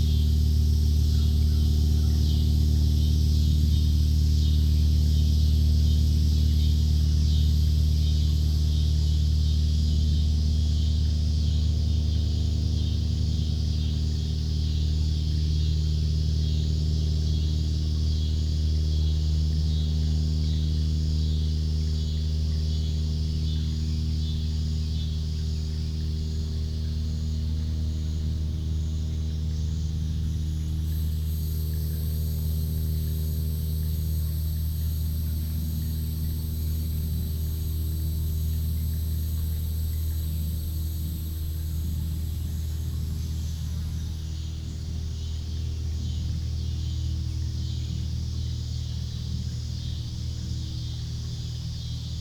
Union Star Rd, West Fork, AR, USA - Late Afternoon under a bridge
Lazy, late afternoon recording from under a bridge as day turns into evening in West Fork, Arkansas. It's about 88 degrees F. There's a small stream running through the large, open-ended concrete box of the bridge. Birds, insects, surprising frogs in the middle, evening cicada chorus starting to come on at the end of the recording. Occasional cars driving overhead and distant propeller planes.